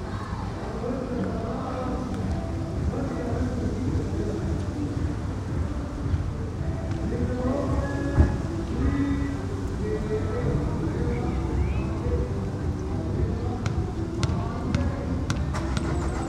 Ljudski vrt Stadium, Mladinska ulica, Maribor, Slovenia - aerobics class
the area around the stadium is very active with sports and physical ativities - from immediately outside the stadium an aerobics class could be heard taking place inside one of the buildings across the street.
14 June 2012